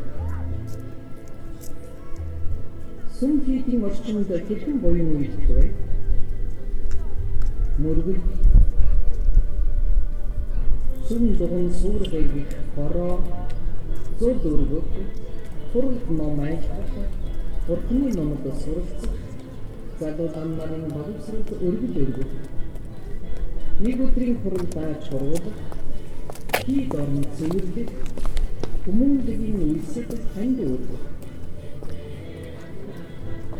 Гандан тэгчинлин хийд - Gandantegchinlin monastery - in the courtyard
Gandantegchinlin monastery - courtyard - music from the monastery - pigeons - people walking by